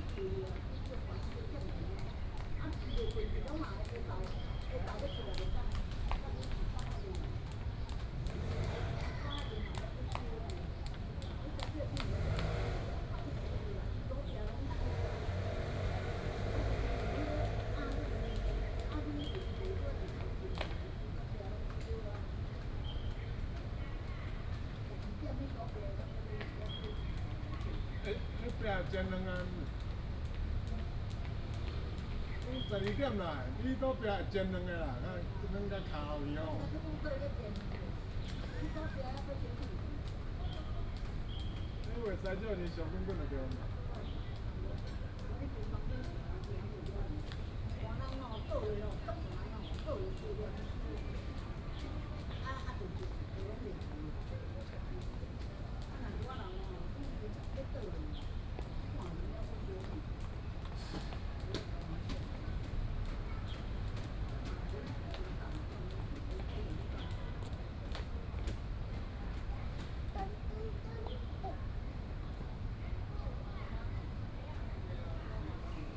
和平公園, Da'an District - The elderly and children
In the park, The elderly and children, Bird calls, Very hot weather, Rope skipping